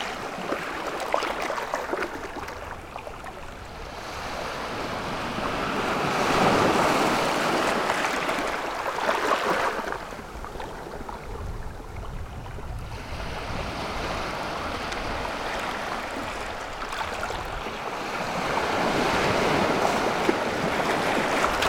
La Tranche-sur-Mer, France - The sea
Recording of the sea at La-Tranche-Sur-Mer beach, walking during 2,5 kilometers going east. As the beach is a curve, there's variation. It's low tide, the sea is very quiet. Some children are playing in the water. Also, there's very very much wind, as often at the sea. Recording is altered but I think it's important as the sea feeling is also the iodized wind.
May 2018